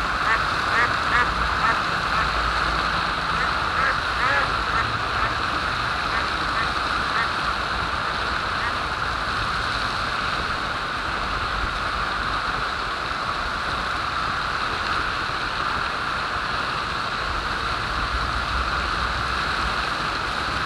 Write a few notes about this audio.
kleiner stadtteich mit enten und wasserfontäne im wind, morgens, soundmap nrw - social ambiences/ in & outdoor nearfield recordings